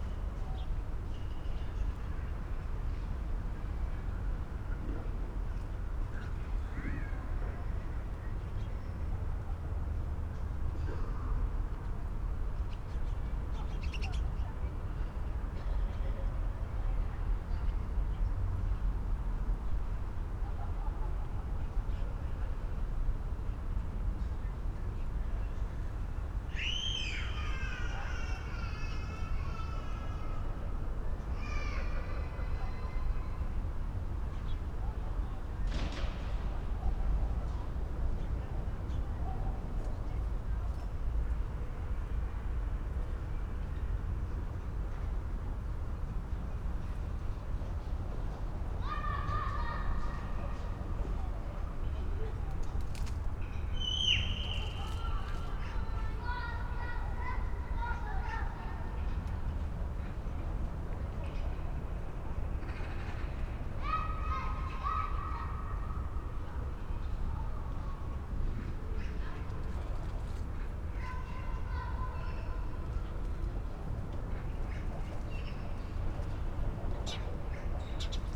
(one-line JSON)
{"title": "Bruno-Apitz-Straße, Berlin Buch - building block, inner yard, early evening ambience", "date": "2021-09-05 19:30:00", "description": "Berlin Buch, inner yard between building blocks, domestic sounds on a Sunday early evening in late Summer\n(Sony PCM D50, Primo EM172)", "latitude": "52.63", "longitude": "13.49", "altitude": "59", "timezone": "Europe/Berlin"}